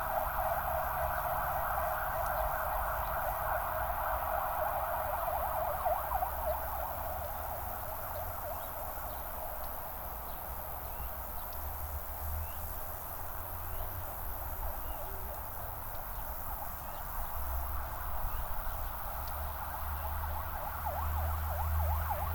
Srem, old slabbed road to the hospital - crickets, bells and ambulance
crickets and birds enjoying and mating on the summer morning, distant church bells, gentle wind, sliced with a ambulance springing out of the nearby hospital